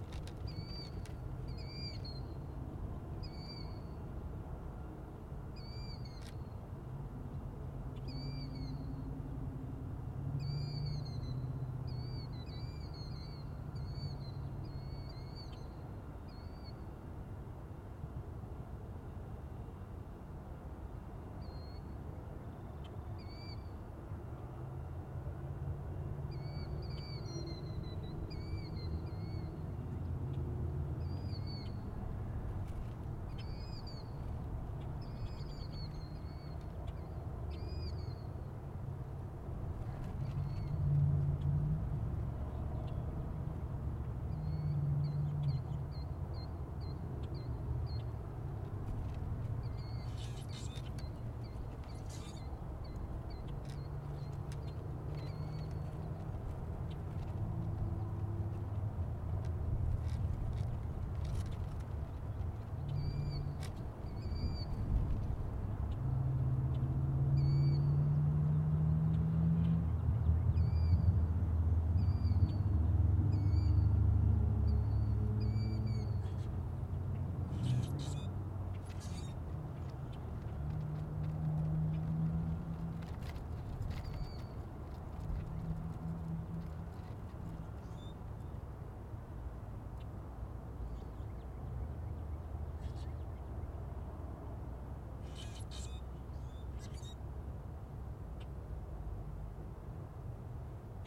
McCausland Ave, St. Louis, Missouri, USA - Old Route 66
On bank of River Des Peres Channel near Old Route 66
Missouri, United States, 16 April, 14:10